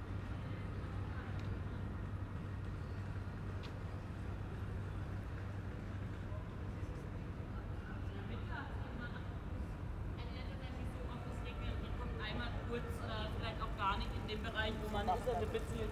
Keibelstraße, Berlin, Germany - Quiet backstreet, city presence, an electric car starts noiselessly